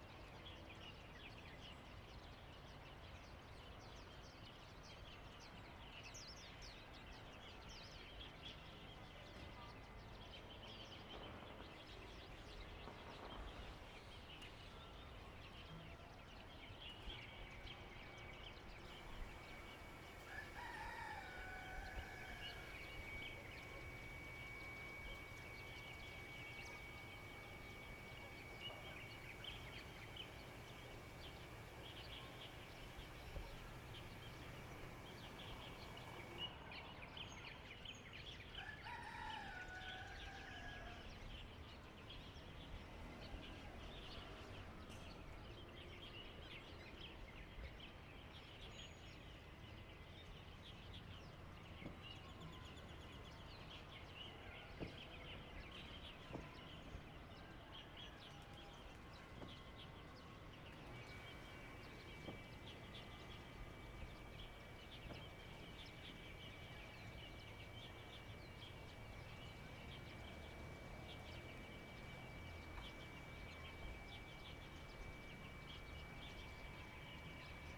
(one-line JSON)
{"title": "雲林縣水林鄉蕃薯村 - Penthouse platform", "date": "2014-02-01 07:32:00", "description": "On the Penthouse platform, Neighbor's voice, Birdsong sound, Chicken sounds, The sound of firecrackers, Motorcycle sound, Zoom H6 M/S", "latitude": "23.54", "longitude": "120.22", "timezone": "Asia/Taipei"}